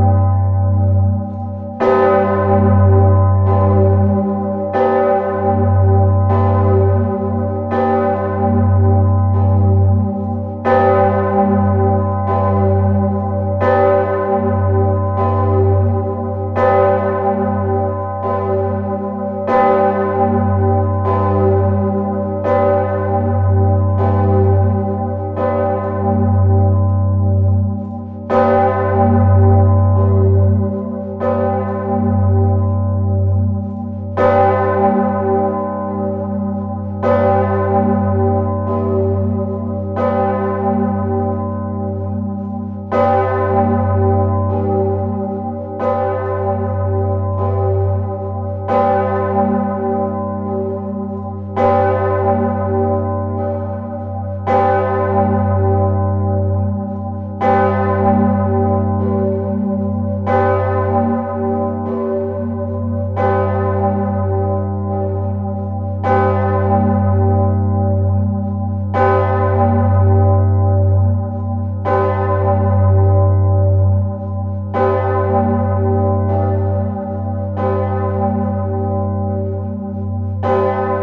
Anhée, Belgique - Maredsous big bell
The Maredsous abbey big bell, recorded inside the tower, on the Assumption of Mary day. The bells weight 8 tons and it's exceptionally ringed on this day. It was a loud beautiful sound.
A very big thanks to the broether Eric de Borchgrave, who welcomed us.